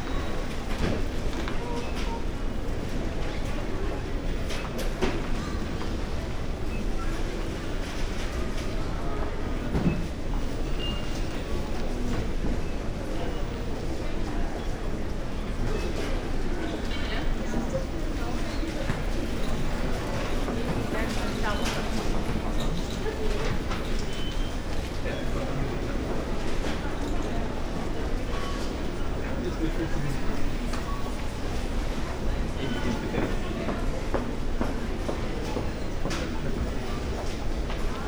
Berlin, Friedrichstr., bookstore - christman bookstore 2015
Berlin, Germany, 23 December 2015, ~17:00